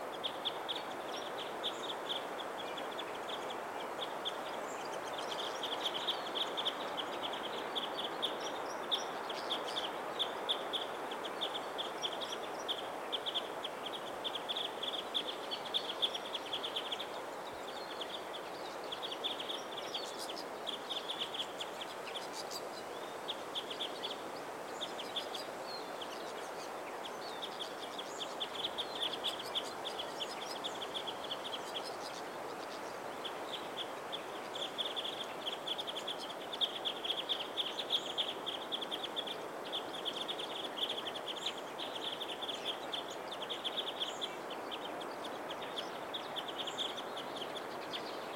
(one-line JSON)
{"title": "Gotska Sandön, Sweden - Warblers on Electricity Wires", "date": "2016-10-20 09:00:00", "description": "A set of recordings made in one autumn morning during a work stay in the northwest coast of the uninhabited island of Gotska Sandön, to the east of Gotland, Sweden. Recorded with a Sanken CSS-5, Sound devices 442 + Zoom H4n.\nMost of the tracks are raw with slight level and EQ corrective adjustments, while a few others have extra little processing.", "latitude": "58.39", "longitude": "19.19", "altitude": "17", "timezone": "Europe/Stockholm"}